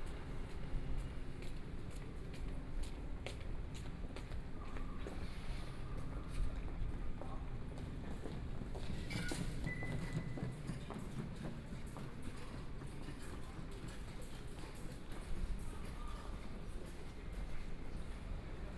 Siaogang Station, Kaohsiung City - Walking in the station
Walking in the station